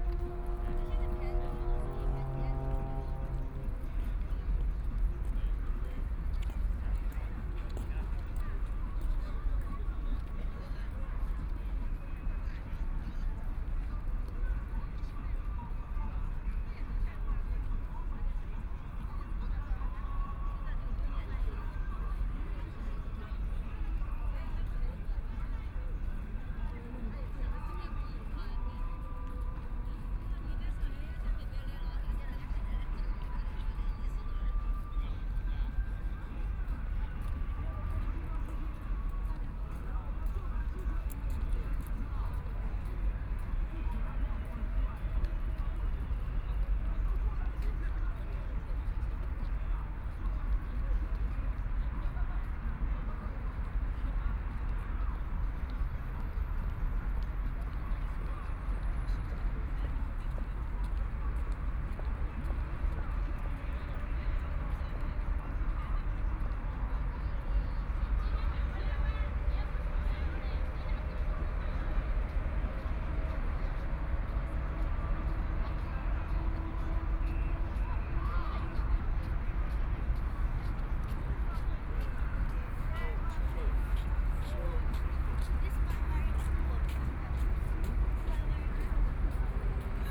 the Bund, Shanghai - environmental sounds
sound of the Boat traveling through, Many tourists, In the back of the clock tower chimes, Binaural recordings, Zoom H6+ Soundman OKM II